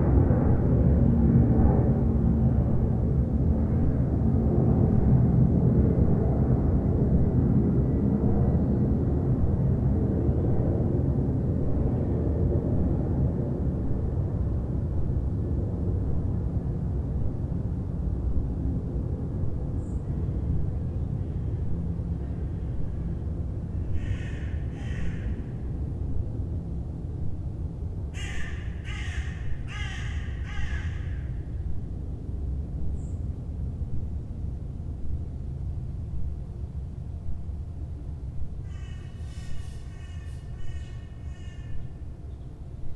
Pfungststrasse, Frankfurt, Germany - Sunday morning on the balcony
Sunday morning sounds, birds, planes, neighbours. recorded on a Zoom H4. staying in Frankfurt to mount an exhibition of 3d work by Eva Fahle-Clouts with a new stereo mix of my FFOmeetsFFM soundscape.